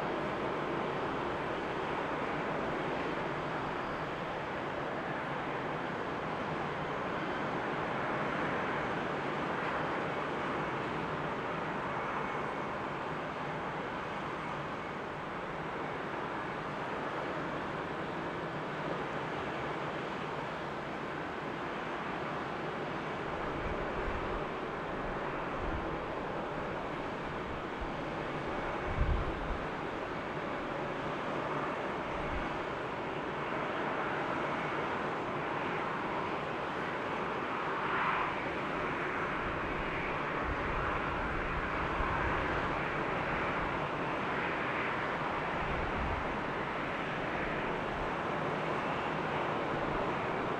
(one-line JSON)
{"title": "MSP Airport Terminal 1 Ramp - MSP Airport - 12R Operations from Terminal 1 Ramp", "date": "2022-01-13 15:30:00", "description": "The sounds of landings and take offs on runway 12R at Minneapolis/St Paul international airport from the Terminal 1 parking ramp. The sounds of the airport ramp and car traffic leaving the terminal can also be heard.\nRecorded using Zoom H5", "latitude": "44.88", "longitude": "-93.21", "altitude": "253", "timezone": "America/Chicago"}